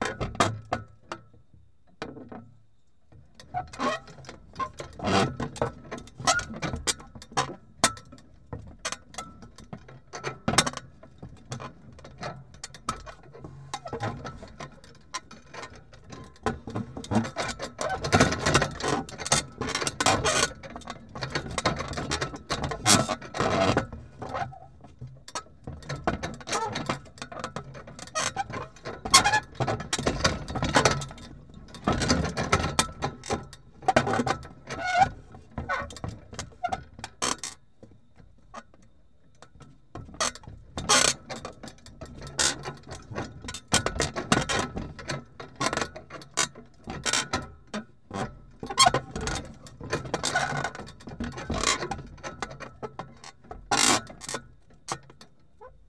Prom. Charles Trenet, Narbonne, France - metalic vibration 07
metalic umbrella moves with the wind
Captation : ZOOM H4n / AKG C411PP